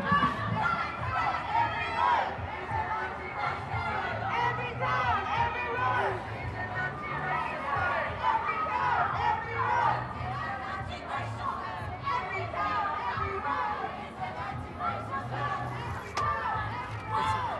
Portland Pl, London, UK - March Against Racism
England, United Kingdom, 19 March, 13:40